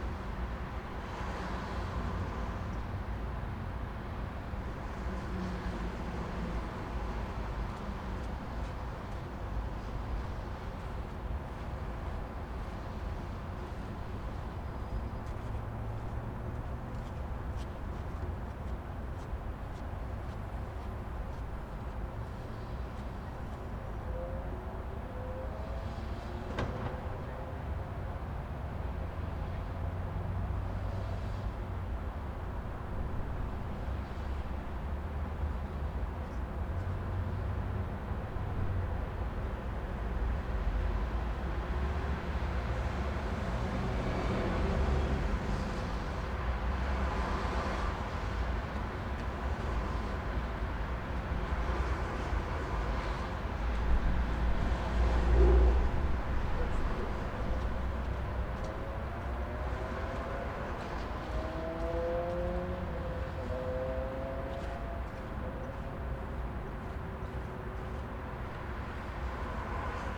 Olsztyn, Polska - Limanowskiego, backyard
In the middle church bell ringing.
5 February 2013, 17:49